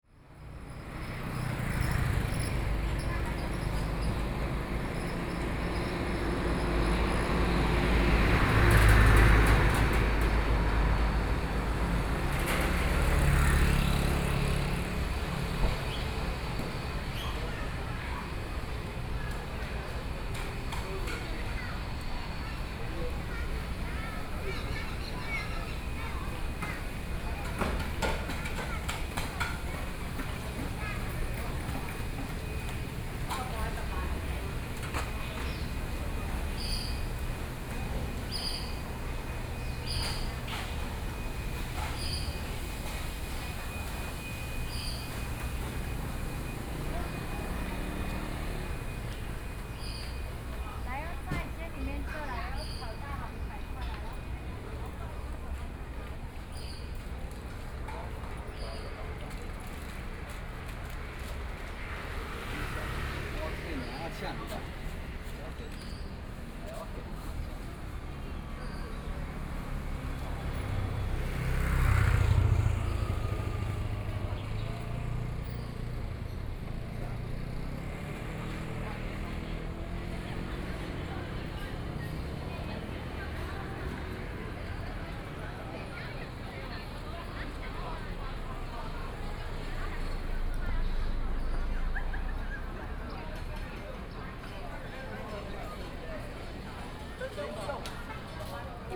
Miaoqian Rd., Qijin Dist. - walking on the Road
Hot weather, Many tourists, Various shops voices, Traffic Sound
Kaohsiung City, Taiwan, May 2014